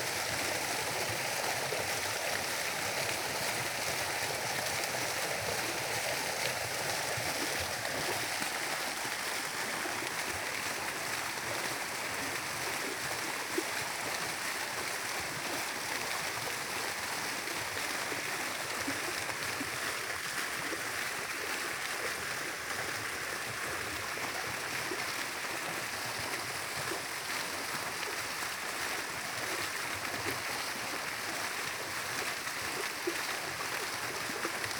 Fährweg, Rathen, Deutschland - Lichterbrunnen, light fountain
strolling around the fountain at night. It just has stopped its illumination of changing colors, mostly purple...
(Sony PCM D50 int. mics.)
Rathen, Germany